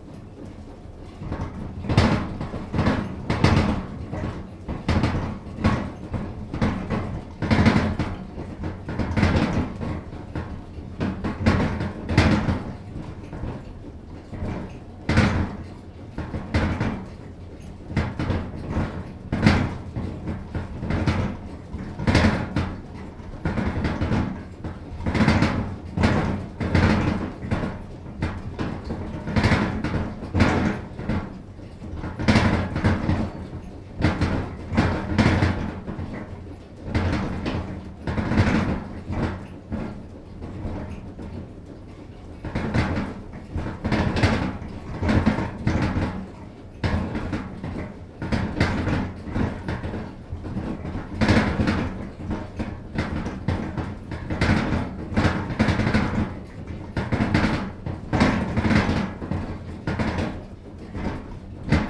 enscherange, rackesmillen, belt drive - enscherange, rackesmillen, flour mixer 02
At the roof level of the old mill. The sound of the flour mixers internal mechanic.
Im Dachgeschoß der Mühle. Eine Aufnahme der inneren Mechanik des Mehlmischers.
À l’étage sous le toit du vieux moulin. Le bruit extérieur du moulin à farine.